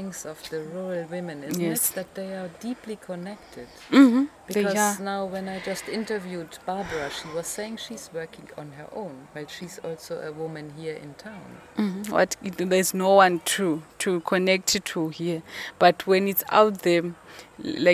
Office of Basilwizi Trust, Binga, Zimbabwe - Abbigal tells about BaTonga women...
We are sitting with Abbigal Muleya outside Basilwizi Trust’s Office in Binga, some voices from people working inside, and a herd of cows passing… the midday breeze is a pleasant cooling, unfortunately though it occasionally catches the mic…
Abbigal describes for listeners the spirit of unity and the concept of team-working among the BaTonga women she is working with, be it in pursuing traditional women’s craft like basket-weaving, or recently in an all-women fishery project. Abbigal is one of the founder members of ZUBO Trust, an organization aiming to enable women to realize, enhance and maximize their social, economic and political potential as citizens of Zimbabwe.
9 November, 12:25